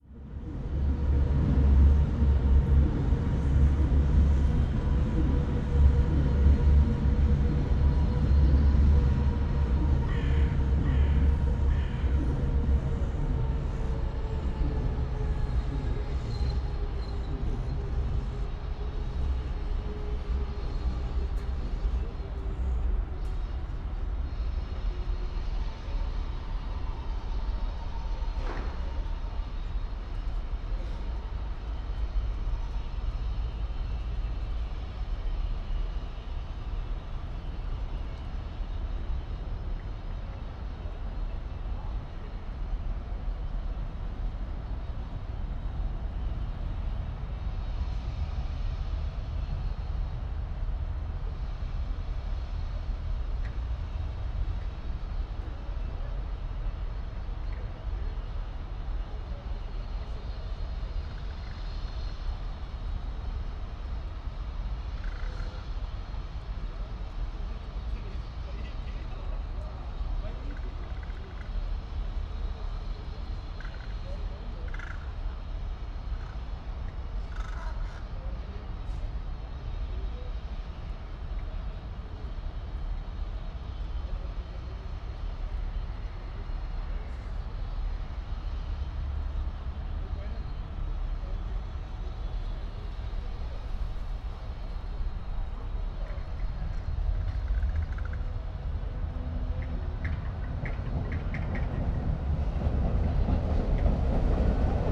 Berlin, Gleisdreieck, Westpark
Gleisdreieck Berlin, the area is under massive reorganisation, from an industrial wasteland to a recreation area.
saturday ambience, recording the air (and testing new mics)
Berlin, Germany, November 2011